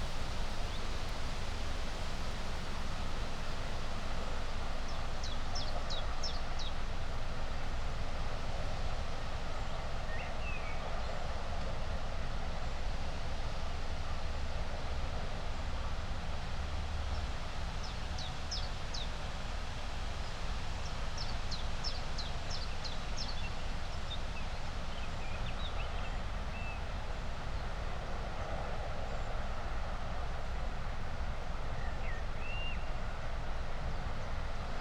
Audun-le-Tiche, Frankreich - wind, birds, frogs, distant machinery
ambience on a former industrial field, now overgrown and mostly abandoned, wind, birds, frogs, distant machinery. Behind the pond the river Alzette disappears in a tube which goes all along Rue d'Alzette in Esch.
(Sony PCM D50, Primo EM272)